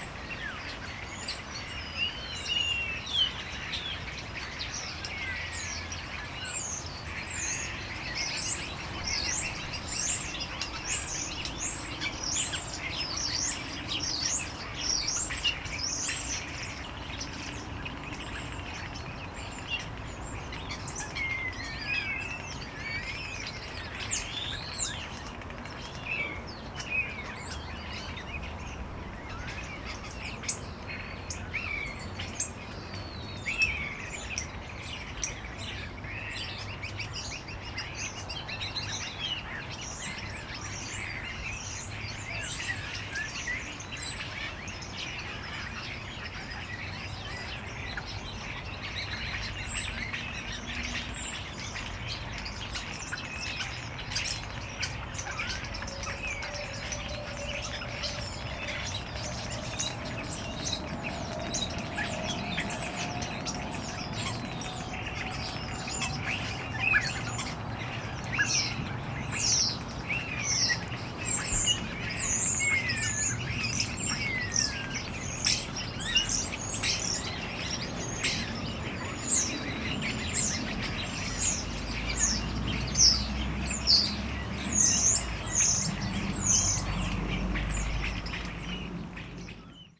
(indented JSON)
{
  "title": "Berlin, Treptow, allotment garden area - birds in bush",
  "date": "2011-10-09 15:05:00",
  "description": "berlin treptow, allotment garden area, birds in bush, city sounds",
  "latitude": "52.48",
  "longitude": "13.47",
  "altitude": "34",
  "timezone": "Europe/Berlin"
}